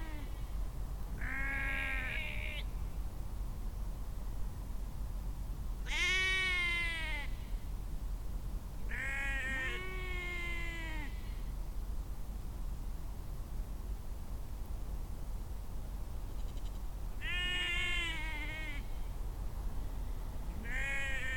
Nortower Lodges, Shetland Islands, UK - Sheep
When I came home to Nortower Lodges, where I stayed in Shetland for Shetland Wool Week and for the North Atlantic Sheep and Wool conference, I heard a lot of baaing in the fields. I think this was the day when the ewes were separated from their ram lambs and the lambs were sent for meat. It was a lot of baaing, late into the night and I stood for a while and listened before heading to my bed.